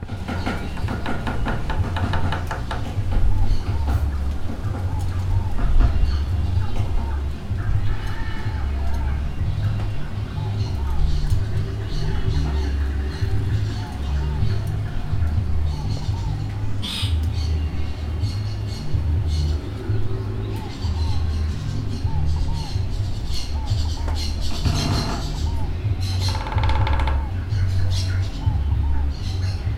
Taganga, casa de Carburo 10am
pájaros y pikos en un lunes muy tranquilo, desde la puerta del hogar
Magdalena, Colombia